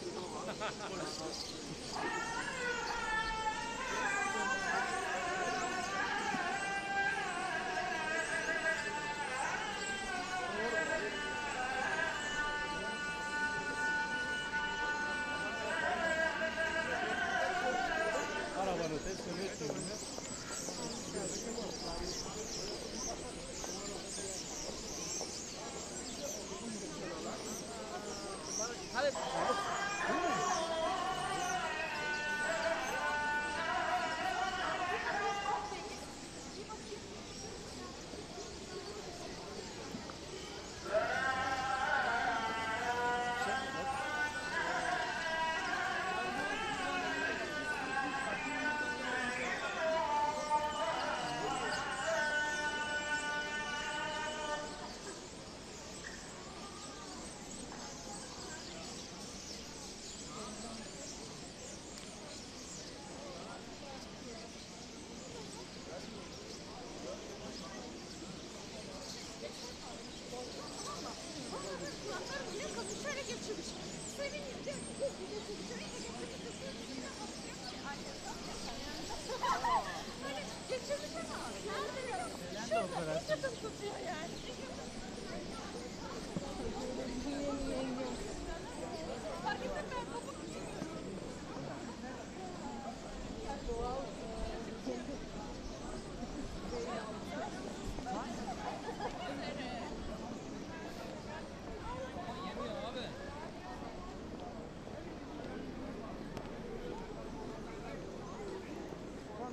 Istiklal Street, Istanbul, Turkey - Istiklal sound walk
sounds of starlings and the azan